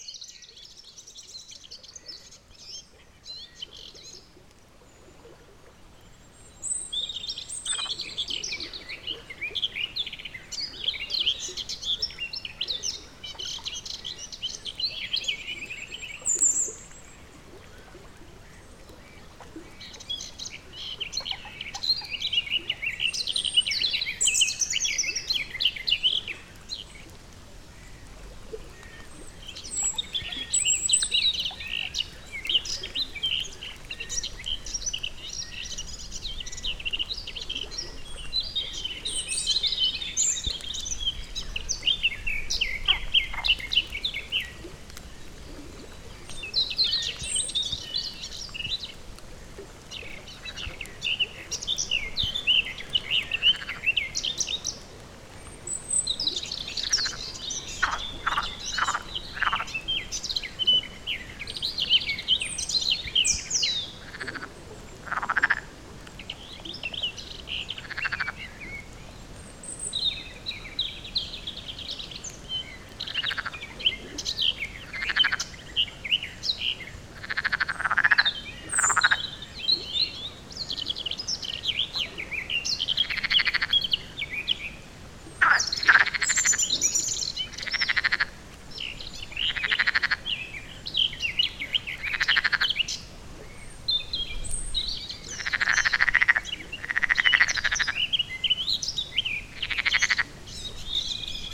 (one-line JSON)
{"title": "La Fuentona, Soria, Spain - Paisagem sonora de La Fuentona - La Fuentona Soundscape", "date": "2013-04-16 15:00:00", "description": "Paisagem sonora de La Fuentona em Soria, Espanha. Mapa Sonoro do Rio Douro. Soundscape of La Fuentona in Soria, Spain. Douro river Sound Map.", "latitude": "41.74", "longitude": "-2.87", "altitude": "1044", "timezone": "Europe/Madrid"}